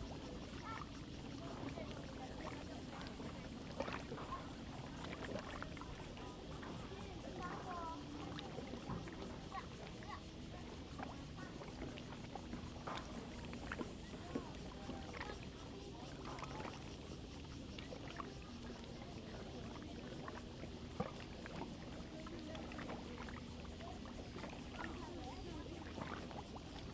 Carp Lake, Shoufeng Township - In the lake side
In the lake side, Yacht, Tourists, Hot weather
Zoom H2n MS+XY
28 August 2014, 10:39